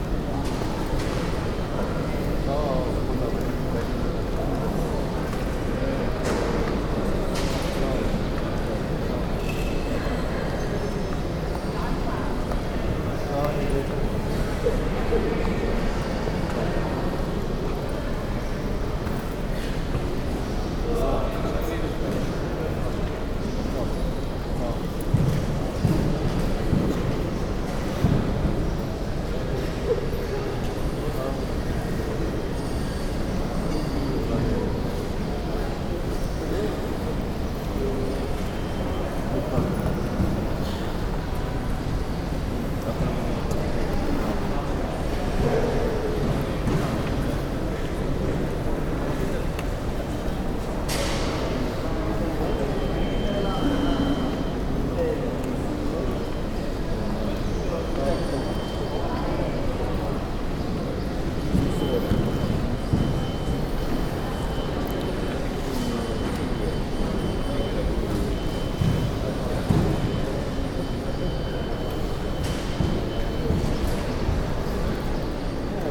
bangalor, karnataka, airport, custom hall
waiting in a row of passengers for passport and custom contraol at bangalor airport. a huge wide and high hall filled with voices of the traveller and the chirps of a group of small local birds
international ambiences and topographic field recordings
Karnataka, India